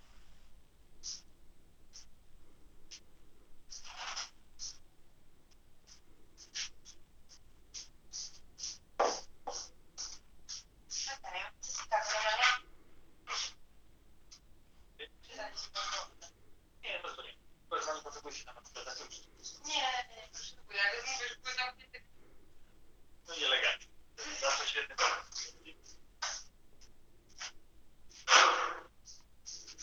Poznan, Mateckiego street - speaker phone phone speaker into mic
it's a recording of a phone call. My friend had to put away his phone but he didn't disconnect the call so I turned on the loud speaker on my phone and placed the recorder next to it. You can hear distorted conversations and noises form the reception desk at the Grand Theater in Poznan. There is speaker installed in the reception room and a microphone on the other side of a glass window. It's used to talk to the receptionist and it picks up all the sounds from the staircase, back entrance. You can hear sounds from this speaker as well. You will also notice the whole recording is choppy due to nosie gate effect commonly used by cell phone operators in order to remove background noise from the person who isn't talking. (sony d50)
Poznań, Poland, 7 May